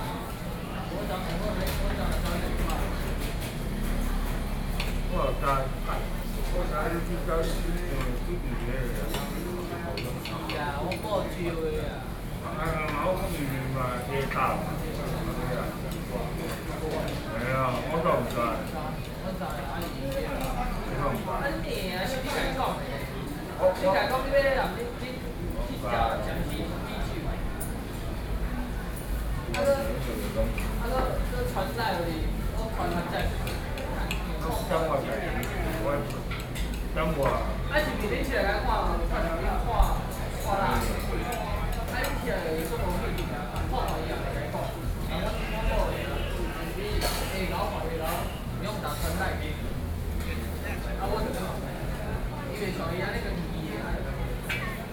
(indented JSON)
{
  "title": "萬華夜市, Wanhua District, Taipei City - in the restaurant",
  "date": "2012-12-03 19:05:00",
  "latitude": "25.04",
  "longitude": "121.50",
  "altitude": "15",
  "timezone": "Asia/Taipei"
}